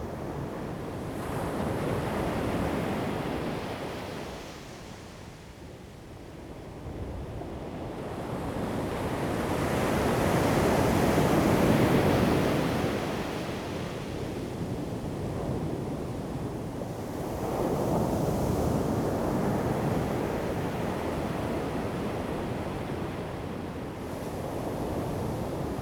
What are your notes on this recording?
Sound of the waves, Traffic Sound, Thunder, Zoom H2n MS+XY